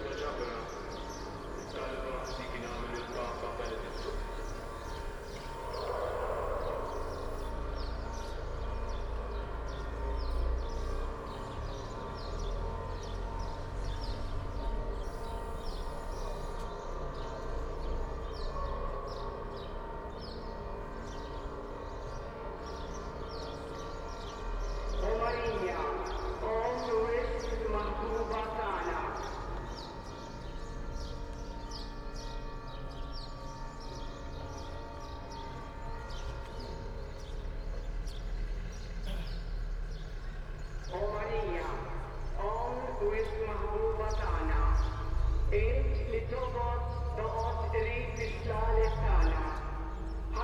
Triq San Girgor, Żejtun, Malta - prayer and procession in the streets
sound of prayer during a procession, amplified by many permanent speakers in the strests.
(SD702, DPA4060)
7 April 2017, 18:10